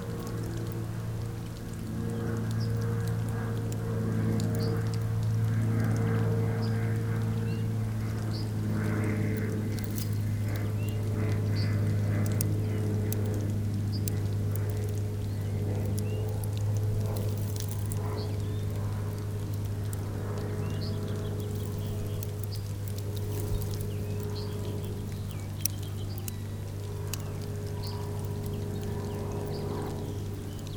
Genappe, Belgique - Ferns growing
A quite uncommon sound...
I was walking threw the forest. My attention was drawn to a strange sound in the pines, it was a permanent and strong cracking. I through of the pines, but, going near the trunks, I heard nothing. It was coming from the ground, into the ground or perhaps near the ground.
I through about insects, but digging, there were nothing special.
In fact, it's the ferns growing. Wishing to understand, I put a contact microphone into the ground, crackings were here too. Also, I went back in this forest by night, and there were nothing excerpt a beautiful moon. Crackings are here only with the sun, and (almost) only in the thick and dense heaps of dead ferns. Digging into, there's small green young ferns.
To record this sound, I simply put two binaural microphones in an heap of dead ferns. I guess the crackings comes from the new ferns, pushing hardly the ground into the humus.